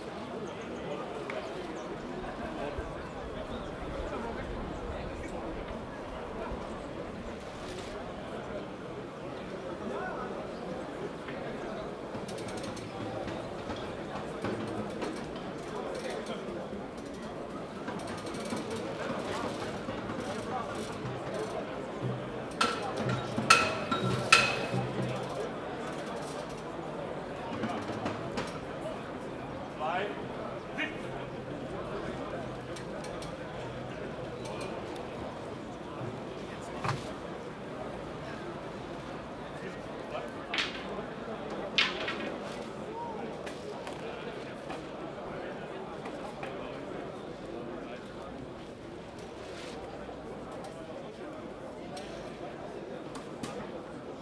Altstadt-Nord, Köln, Deutschland - Demonstration of brown coal miners
500 workers from the brown coal industry demonstrate for their workplace which they fear are in danger since the government is pushing for a reduction of CO2 emissions. A representative of the union is speaking.
Köln, Germany, 21 May 2015